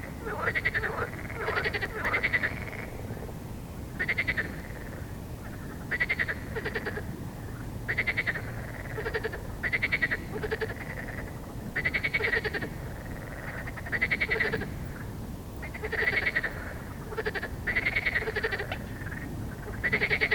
Nieuwendammerdijk en Buiksloterdijk, Amsterdam, Netherlands - The beautiful frog song
We came home from a lovely meal in Amsterdam and, as it was a nice warm evening, decided to take a stroll around the neighbourhood. Not far from our place, we heard this amazing sound, so I ran back to get my recorder, and Mark and I stood for a good 20 minutes or so listening to the frogs and all their awesome voices. Recorded with EDIROL R-09 onboard mics, sorry it's a bit hissy.
28 May, 23:30